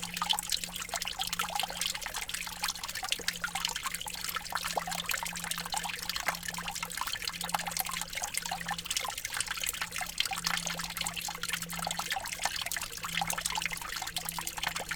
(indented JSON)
{
  "title": "neoscenes: spring melt-water",
  "date": "2019-03-30 12:47:00",
  "description": "Listening to springtime in the Rocky Mountains, as icy melt-water erodes the conglomerate sandstone of Hidden Mesa ... under the constant drone of air traffic.",
  "latitude": "39.40",
  "longitude": "-104.80",
  "altitude": "1965",
  "timezone": "America/Denver"
}